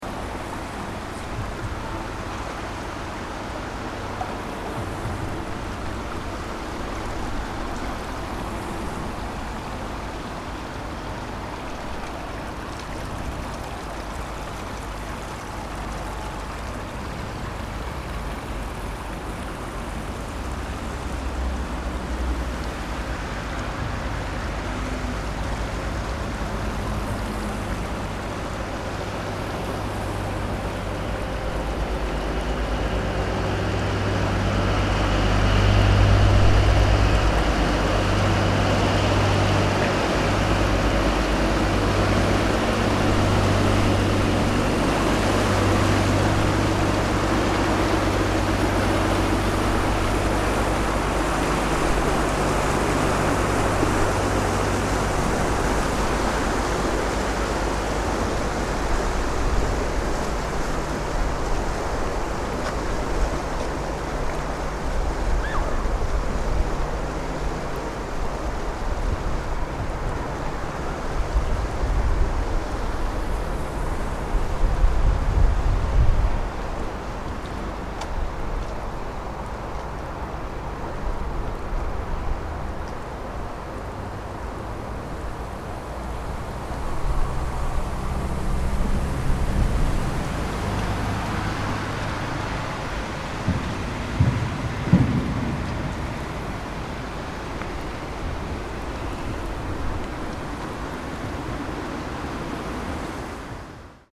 castrop-rauxel-henrichenburg - schiff auf dem rhein-herne-kanal
schiff auf dem rhein-herne-kanal
Castrop-Rauxel, Germany, December 2009